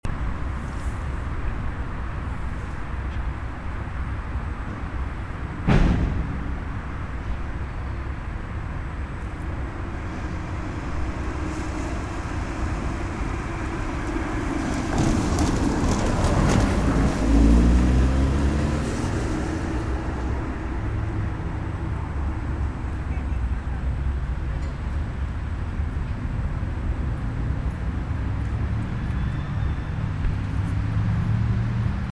School terrain, Zoetermeer
Electric hum on soundwalk